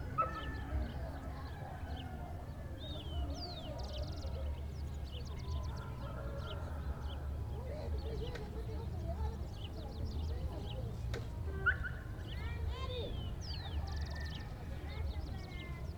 Pachacutec - Pachacutec Shanty Town, Peru
Pachacutec Shanty Town, Early Morning Ambience. World Listening Day. WLD.
26 May 2010, 06:00